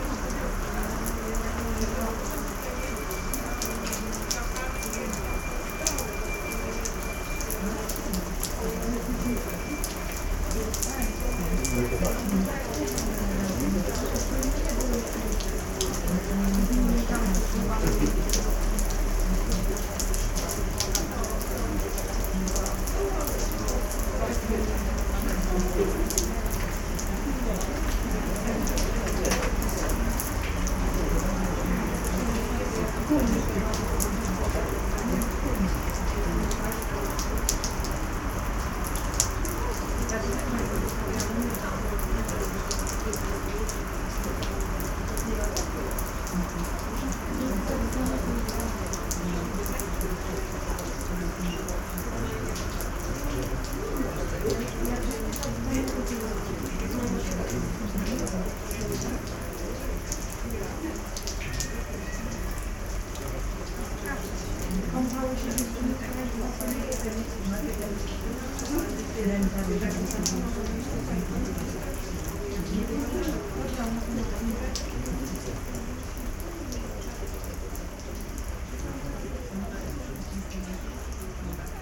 Thorn House at Park Słotwiński, Krynica-Zdrój, Polska - (654 BI) talks at thorn house
Binaural recording of talks in a round thorn house / graduation tower in Park Slotwiński.
Recorded with DPA 4560 on Sound Devices MixPre6 II.
województwo małopolskie, Polska, 26 July 2020, 13:00